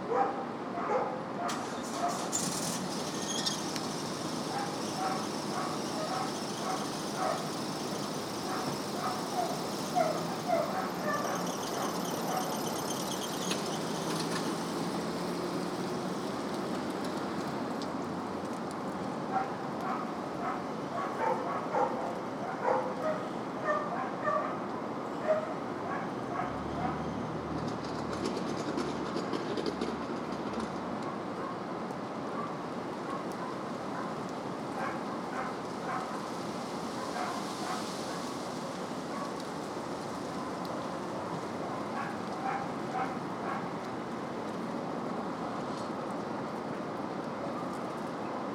Fulton Market, Chicago, IL, USA - dogs next door
Recording from outside pet care facility next door to the Chicago Artists Coalition where my studio was located.
29 November